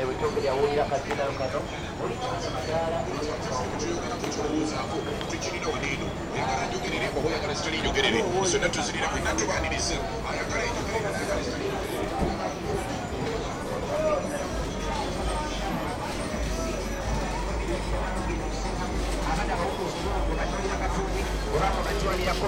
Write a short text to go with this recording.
walking along, recorded with a zoom h2